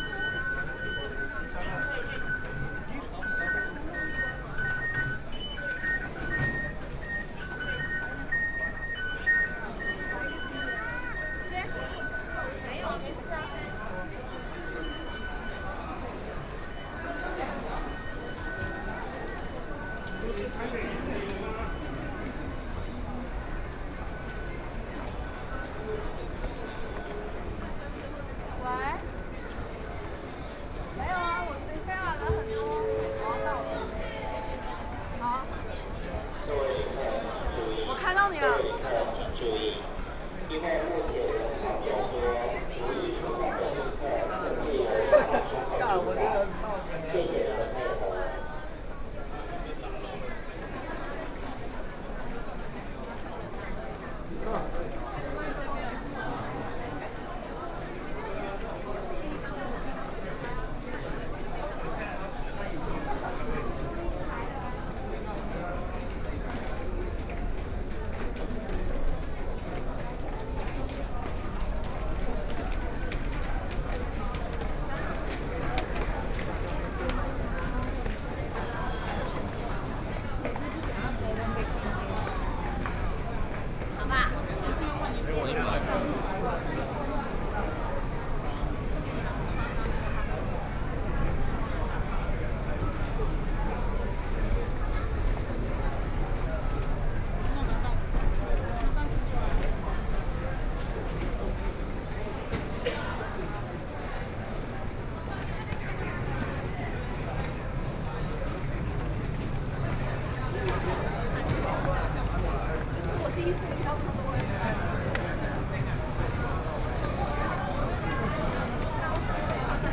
Taipei Main Station, Taiwan - Crowds
Very many people at the station, Very many people ready to participate in the protest